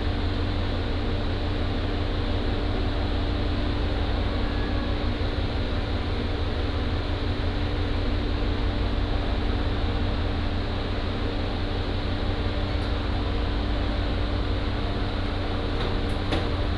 水頭碼頭, Jincheng Township - In the cabin
walking In the cabin